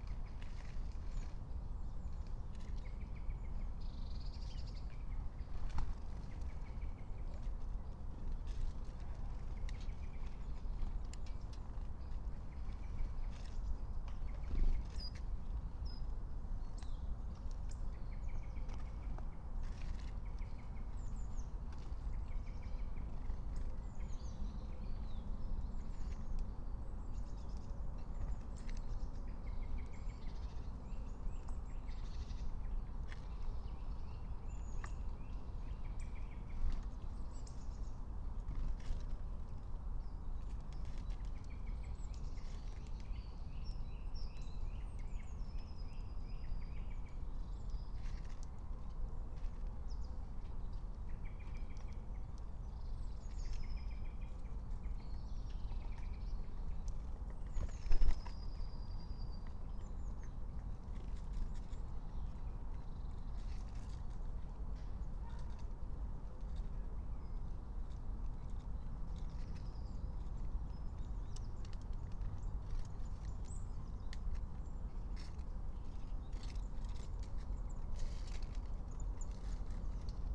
08:15 Berlin, Königsheide, Teich - pond ambience
16 January 2022, ~08:00